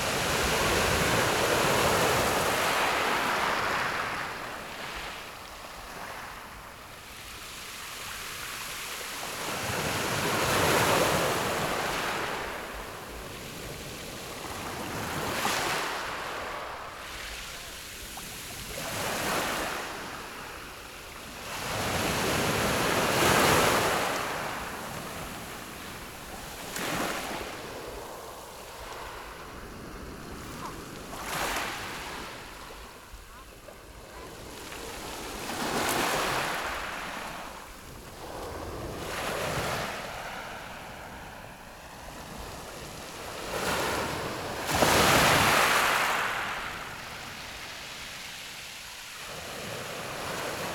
內埤灣, Su'ao Township - Sound of the waves
Sound of the waves, At the beach
Zoom H6 MS+ Rode NT4